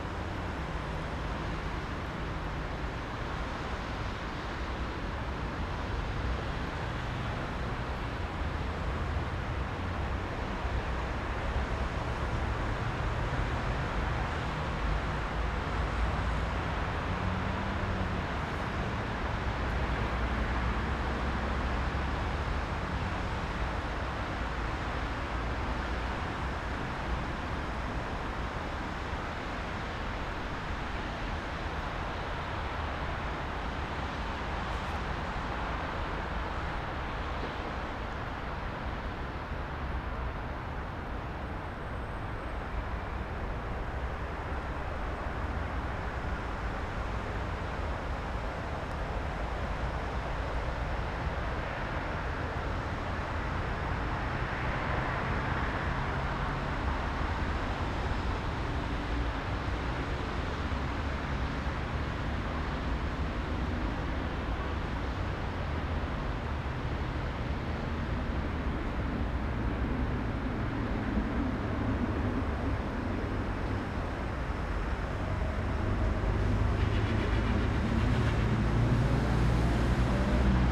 Olsztyn, Polska - West train station (2)
Train arrival in the middle. City rush. Snow is melting.
Olsztyn, Poland, 5 February 2013, ~16:00